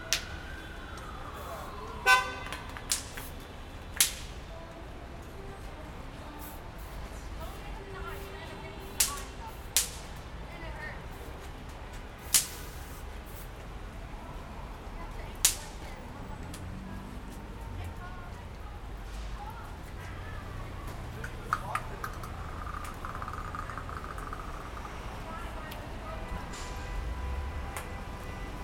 Walker St, New York, NY, USA - Kids playing with bang snaps in Chinatown, NY
A group of kids throwing bang snaps in the street celebrating Year of the Dog.
Street sounds in Chinatown, NYC.
Zoom H6